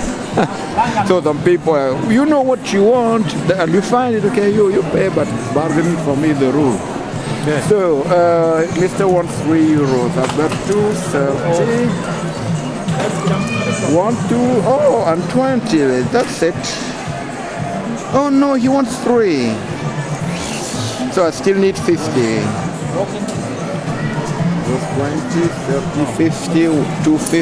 Vallilan makasiinit (Valtterin kirpputori), Aleksis Kiven katu, Helsinki, Suomi - At the flea market Valtteri
A man from Africa is looking for a video projector at the "Valtteri" flea market in Vallila, Helsinki.